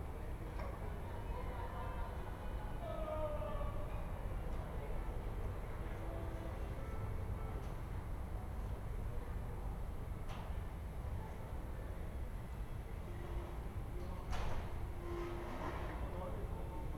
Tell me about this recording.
"Round one pm with sun and dog in the time of COVID19" Soundscape, Chapter XXXII of Ascolto il tuo cuore, città. I listen to your heart, city, Friday April 3rd 2020. Fixed position on an internal terrace at San Salvario district Turin, twenty four days after emergency disposition due to the epidemic of COVID19. Start at 1:09 p.m. end at 01:42 p.m. duration of recording 33’04”.